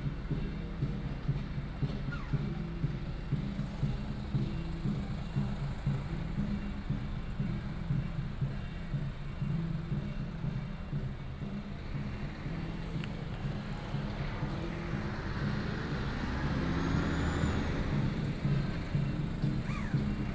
番社同安廟, Zhuangwei Township, Yilan County - In the square
In the square in front of the temple, Traffic sound, Firecrackers sound
Zhuangwei Township, Yilan County, Taiwan, 18 November 2016